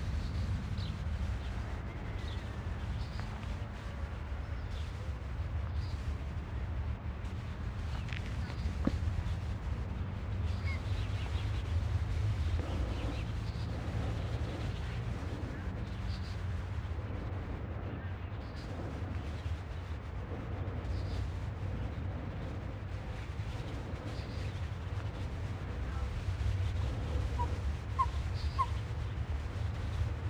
Basbellain, Luxemburg - Basbellain, evening atmosphere in the fields
Abendstimmung an einem milden Sommerabend. Vogelstimmen, entfernte Geräusche von Kühen und Schafen, Windbewegungen in den Büschen.
In der Ferne die Glocke der Kirche. Es ist 21:30 Uhr
Atmosphere during a mild summer evening. Bird voices, distant cow and sheep sounds, wind movements in the nearby bushes. In the distance the church bell. It is 9.30 p.m. A motorbike
is passing by.
Luxembourg, 4 August 2012